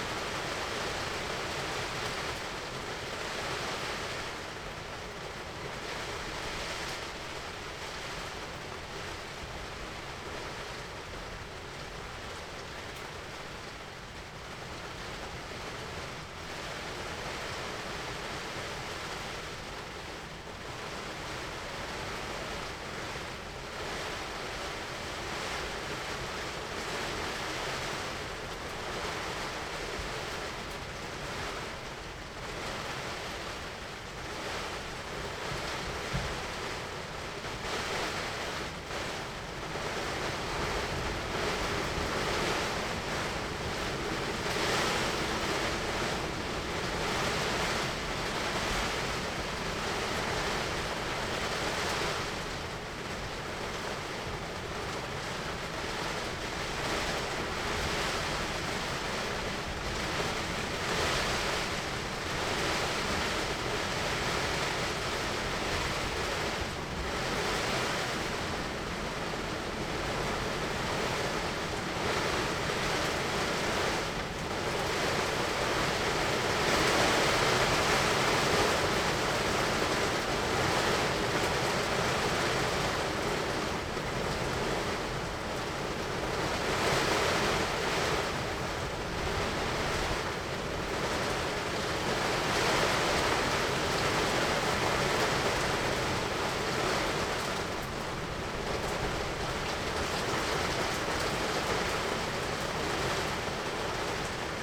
workum, het zool: marina, berth h - the city, the country & me: marina, aboard a sailing yacht
rain hits the tarp, radio traffic on channel 73
the city, the country & me: july 18, 2009